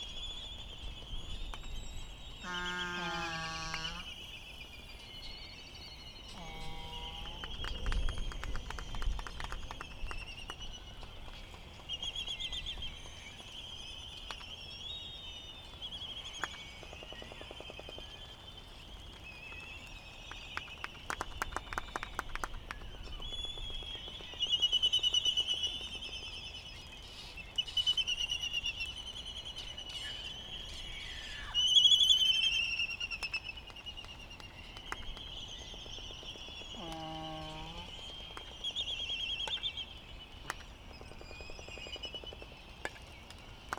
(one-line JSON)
{"title": "United States Minor Outlying Islands - Laysan albatross dancing ...", "date": "2012-03-13 03:20:00", "description": "Laysan albatross ... Sand Island ... Midway Atoll ... birds giving it the full display ... sky moos ... whistles ... whinnies ... preens ... flicks ... yaps ... snaps ... clappering ... open lavalier mics ... not yet light so calls from bonin petrels ... warm with a slight breeze ...", "latitude": "28.22", "longitude": "-177.38", "altitude": "9", "timezone": "Pacific/Midway"}